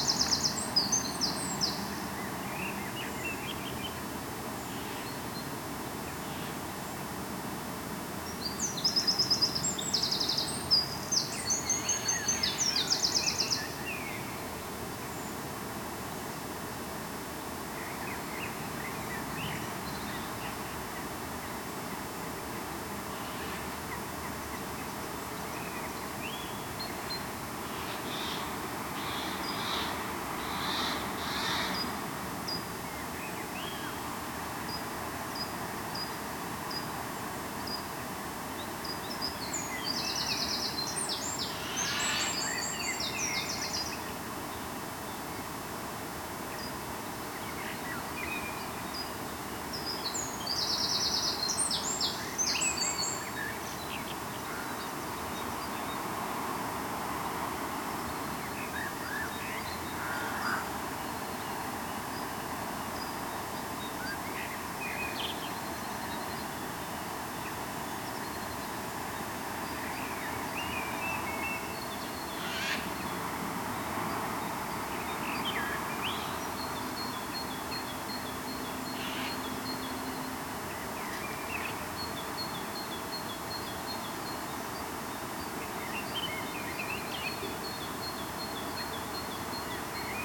Rue Devant les Grands Moulins, Malmedy, Belgique - Morning birds, bells at 8 am.
Drone from the air conditionning, or electric?
Tech Note : SP-TFB-2 AB microphones → Sony PCM-M10.
Liège, Wallonie, België / Belgique / Belgien, 17 April, 08:00